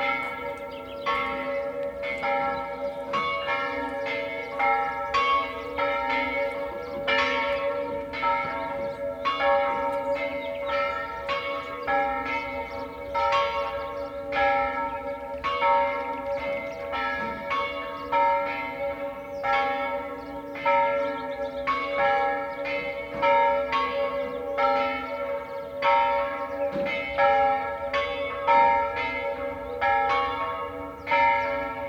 Vrbnik, island Krk, Croatia, valley near the sea - echo of church bells
church bells from the top of the hill heard from the valley below, birds